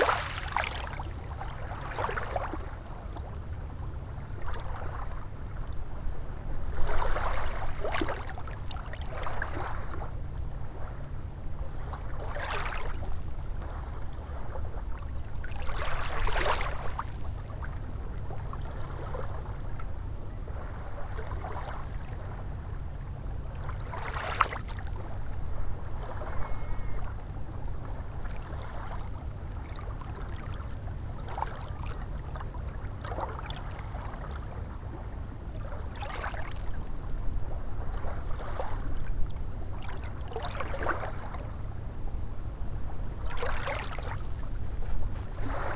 thiessow: strand - the city, the country & me: beach
sloshing waves over pebbles
the city, the country & me: march 6, 2013
6 March 2013, ~15:00